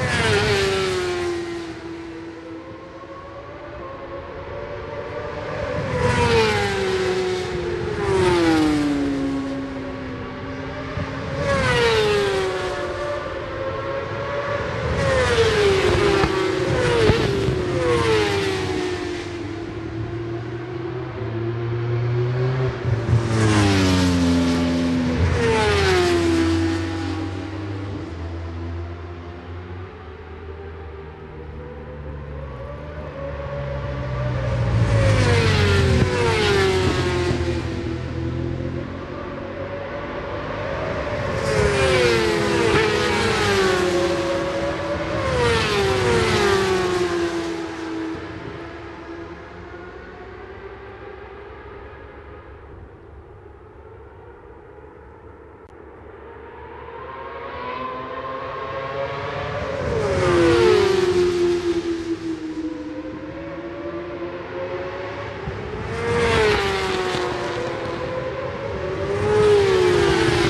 Brands Hatch GP Circuit, West Kingsdown, Longfield, UK - british superbikes 2004 ... supersports ...
british superbikes 2004 ... supersport 600s qualifying one ... one point stereo mic to minidisk ...
19 June